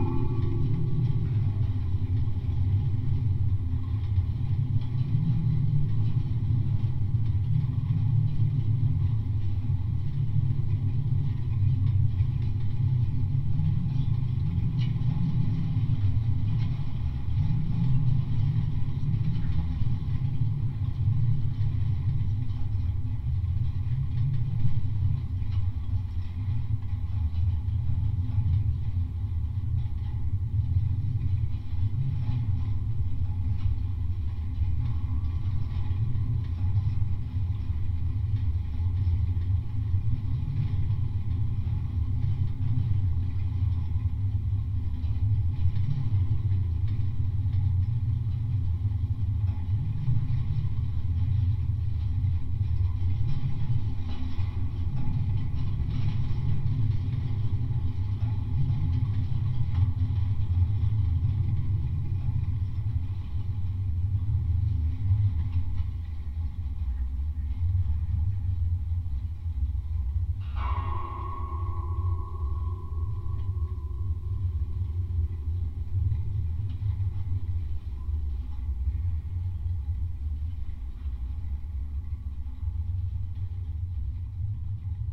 Vabalai, Lithuania, fence at pump station
contact microphones on a fence at pump station